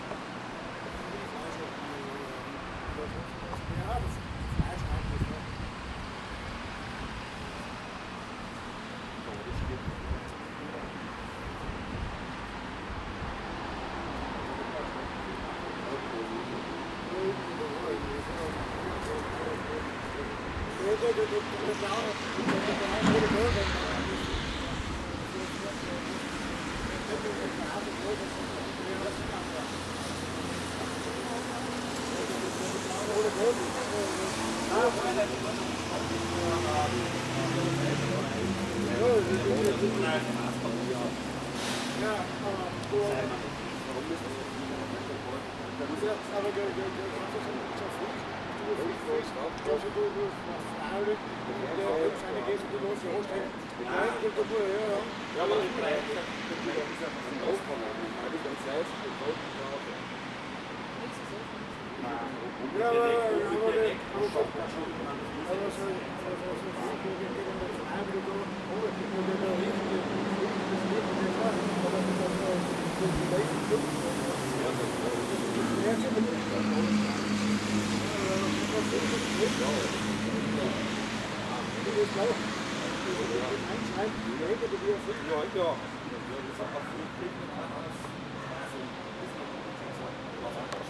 Puntigam, Graz, Österreich - People talking at tramway station
People are talking at a snack stand while others are waiting for the tramway. It has been raining a bit, so the the cars driving around are louder than normal.